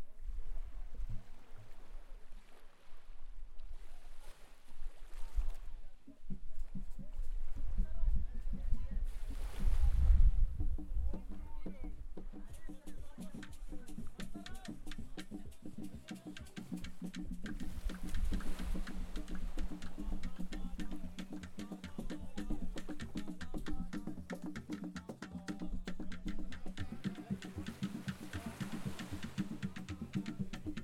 Nungwi Beach, Zanzibar, Tanzania - Sunset on Nungwi beach –a boat with drummers in the distance
Nungwi beach is, by Zanzibar standards, still a relatively public beach and a good mix of crowds come here to look at the sunset. White sands, crystalline blue water, and of course, musicians everywhere. This day a boat was coming back from an afternoon excursion, probably, and there were some 4 or 5 drummers on board. As the boat got anchored they looked about ready to stop jamming, but kept on going, almost stopping again, and continuing. It was beautiful to watch and no-one on the beach wanted them to stop either...
26 October 2016, 18:00